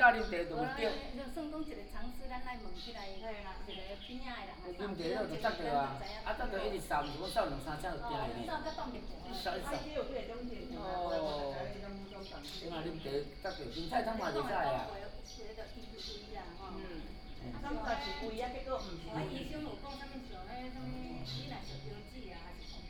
太麻里車站, Taimali Township, Taitung County - At the train station platform
At the train station platform, Train arrives at the station, Bird cry, Station Message Broadcast, Chicken roar, A group of seniors chatting
Taimali Township, 站前路2號, March 2018